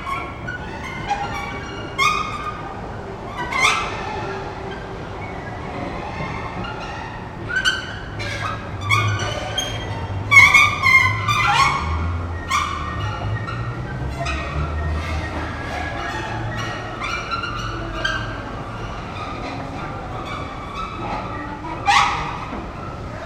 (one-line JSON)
{"title": "Lindower Str., Berlin, Deutschland - The Escalator Acts Up/Die Rolltreppe spielt auf", "date": "2018-09-03 13:02:00", "description": "S-Bahn station Berlin Wedding. At noon. Many people come out of the subway, drive up the escalator in a glass-roofed room to the S-Bahn, others go down the steps next to it to the subway. In between is the busy Müllerstraße. All the sounds come from this escalator. I walk around and take the stairs. Most people react unmoved to the sounds as if it were everyday life in Berlin. Three hours later, the escalator is noiselessly rhythmic again.\nS-Bahn Station Berlin Wedding. Mittags. Viele Leute kommen aus der U-Bahn, fahren die Rolltreppe in einem glasüberdachten Raum zur S-Bahn hoch, andere gehen die Stufen daneben zur U-Bahn runter. Dazwischen die vielbefahrene Müllerstraße. Die Geräusche kommen alle nur von dieser einen Rolltreppe. Ich umlaufe und befahre die Treppe. Die meisten Menschen reagieren unbewegt auf die Geräuschkulisse, als sei das Alltag in Berlin. Drei Stunden später ist die Treppe wieder geräuschlos rhythmisch.", "latitude": "52.54", "longitude": "13.37", "altitude": "38", "timezone": "GMT+1"}